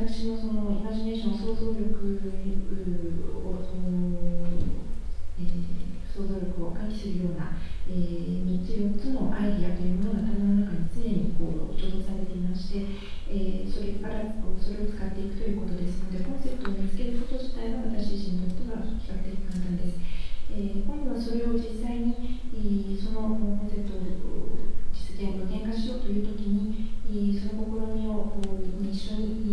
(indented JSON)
{
  "title": "yokohama, kaat theatre, public interview",
  "date": "2011-07-01 12:21:00",
  "description": "Inside the big studio of the KAAT Theatre (Kanagawa Arts Theater). A female japanese translator translating the answers of french choreographer and dancer Fabien Prioville after a show of his solo performance Jailbreak Mind.\ninternational city scapes - topographic field recordings and social ambiences",
  "latitude": "35.45",
  "longitude": "139.65",
  "altitude": "19",
  "timezone": "Asia/Tokyo"
}